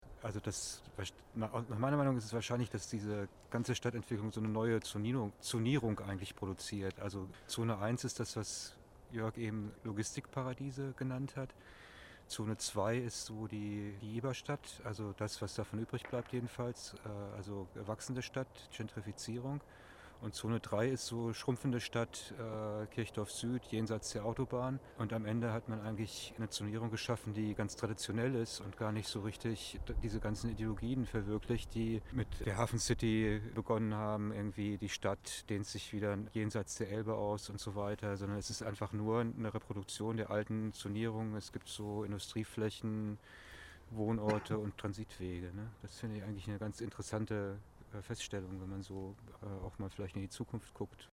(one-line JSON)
{"title": "Zonierung des Raums, 31.10.2009", "description": "Die drei - traditionellen - Zonen des Raums werden angesichts einer Brache beschrieben, die von einem Trockenrasen in eine Motorenfabrik verwandelt wird.", "latitude": "53.52", "longitude": "9.98", "altitude": "1", "timezone": "Europe/Berlin"}